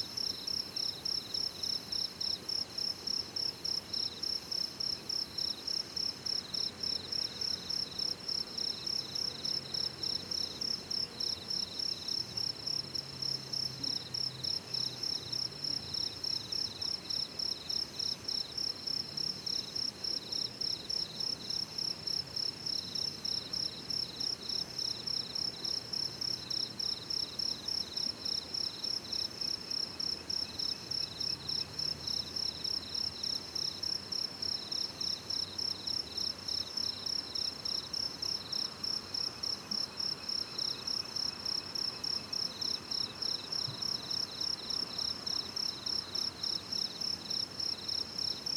田份橋, 桃米巷, 埔里鎮 - Insects sounds
In the fields, Insects sounds
Zoom H2n MS+XY
Nantou County, Puli Township, 水上巷, 18 April 2016, ~19:00